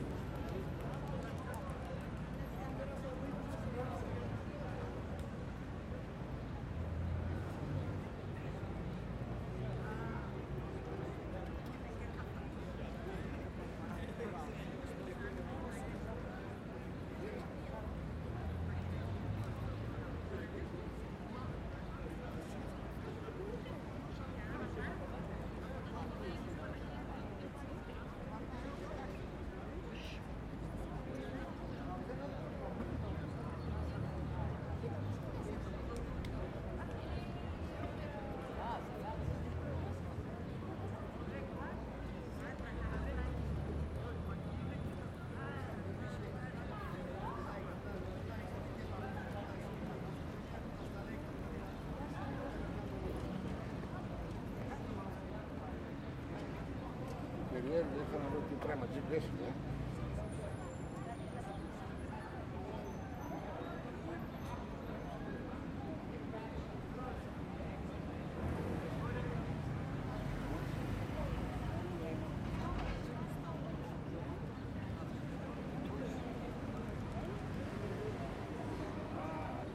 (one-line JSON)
{"title": "Ανθυπασπιστού Μιλτιάδη Γεωργίου, Ξάνθη, Ελλάδα - Central Square/ Κεντρική Πλατεία- 13:15", "date": "2020-05-12 13:45:00", "description": "Men discussing, people talking distant, distant traffic.", "latitude": "41.14", "longitude": "24.89", "altitude": "85", "timezone": "Europe/Athens"}